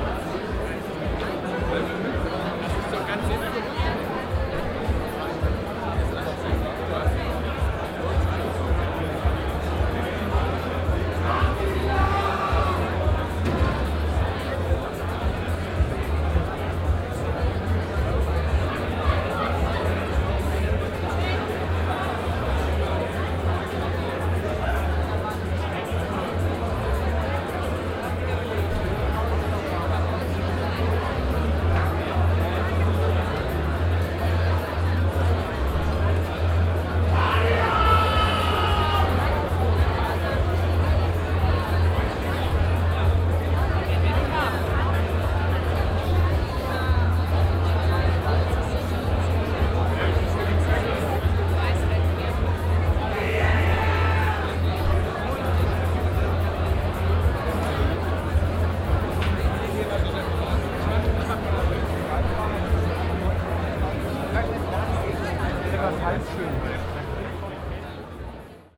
cologne, gloria, audience before concert
inside the club hall - audience before a concert
soundmap nrw - social ambiences and topographic foeld recordings
gloria, apostelnstraße, 15 August